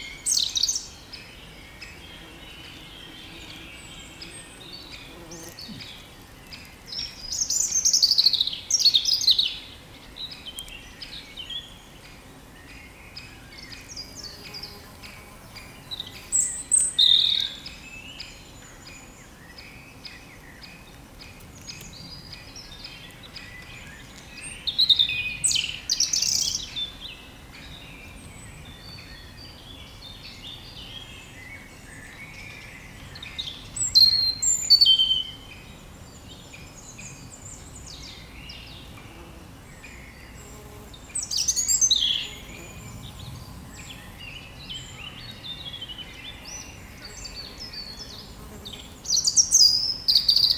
Lasne, Belgique - In the woods
Recording of the birds into the urban woods of Belgium. The reality is that there's no real forest in Belgium, it's only trees, grass, leaves : in a nutshell, these objects scattered in an extremely urbanized landfield. Because of this pressure, pollution is considerable : the cars, the trains, but also and especially the intolerable airplanes. It's interesting to record the Belgian forest, as a sonic testimony of aggression on the natural environment. This explains why this sound is called "the woods" rather than "the forest".
Birds are the European Robin, the Great Spotted Woodpecker, and the regular chip-chip-chip-chip are very young Great Spotted Woodpecker. At the back, European Green Woodpecker. Also the sometimes "teetooteedoodzzii" are Short-toed Treecreeper.
Lasne, Belgium, 21 May 2017, 17:00